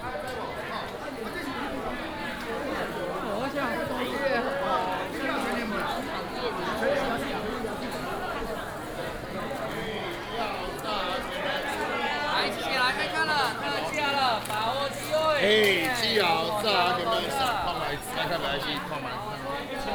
新竹中央市場, Hsinchu City - Traditional markets
Walking in the traditional market inside, Narrow indoor lane
Hsinchu City, Taiwan